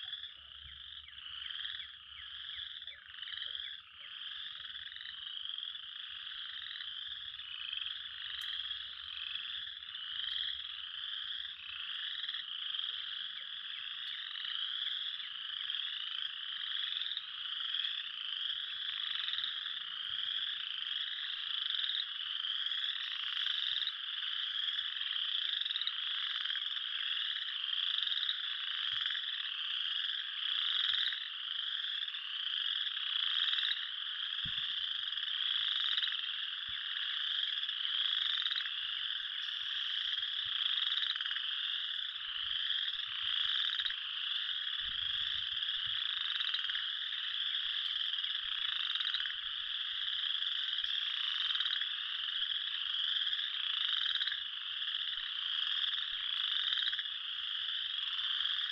Recorded on a Zoom H4 Recorder